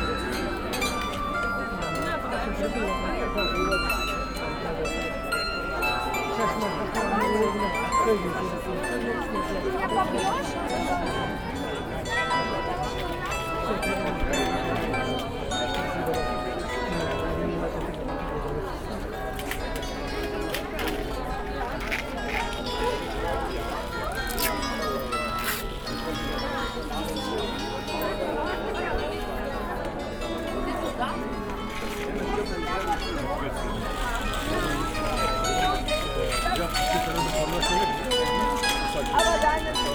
Bismarckstraße, Hamm, Germany - Ukrainian song at Refubeats 2022
After a break of two years due to the pandemic, the big festival of the refugee aid Hamm takes place again. People from many different countries who have found and created their new home in the city are the hosts and artistic performers at this festival.
Nach zwei Jahren pandemiebedingter Pause findet das grosse interkulturelle Fest der Flüchtlingshilfe Hamm wieder statt. Menschen aus vielen verschiedenen Ländern, die in der Stadt ihren neuen Lebensmittelpunkt gefunden und geschaffen haben, sind bei diesem Fest die Gastgeber*innen und künstlerischen Darbieter*innen.